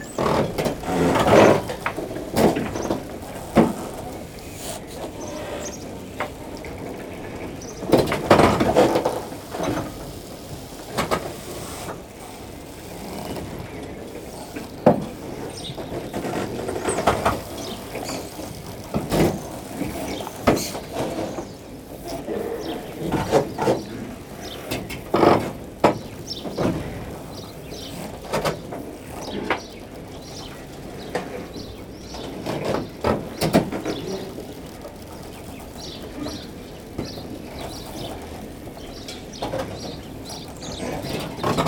Saint-Martin-de-Ré, France - The marina
The very soft sound of the marina during a quiet low tide, on a peaceful and shiny sunday morning.